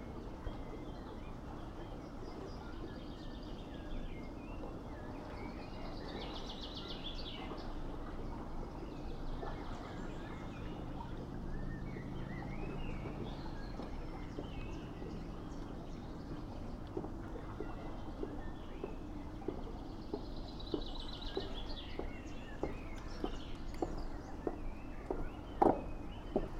Maribor, Slovenija - on the bridge to mariborski otok
a bridge above Drava river and a couple walking over